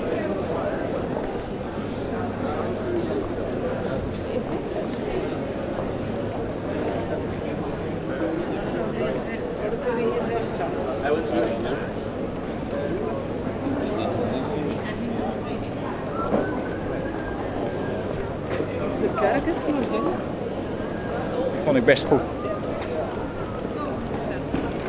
People walking quietly in the very commercial street of Maastricht.